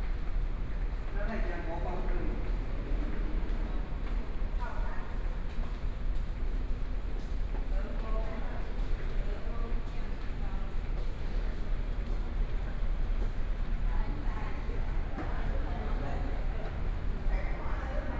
Taichung, Taiwan - Underpass
Walking in underground passage, From the square in front of the station to the area behind the station, Zoom H4n+ Soundman OKM II